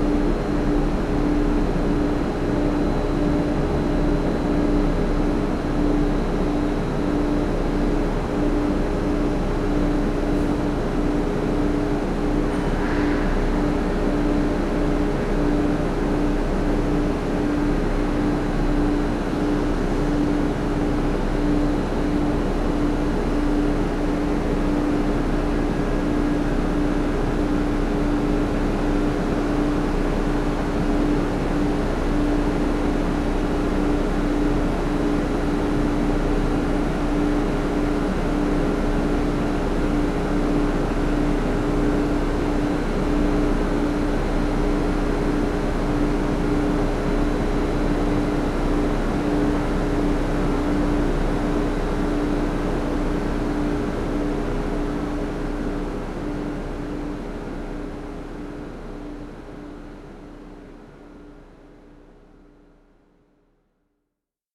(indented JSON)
{
  "title": "Sound in a stairwell (level, Memorial Hermann Hospital, Houston, Texas - Sound in a stairwell (level 2)",
  "date": "2012-08-24 03:14:00",
  "description": "Heavy layered drone in the stairwell of this parking garage. Was going to see my dad for the first time after his surgery, and noted how the dense/intense sound harmonized with my anxiety.\nTascam DR100 MK-2 internal cardioids",
  "latitude": "29.78",
  "longitude": "-95.54",
  "altitude": "28",
  "timezone": "America/Chicago"
}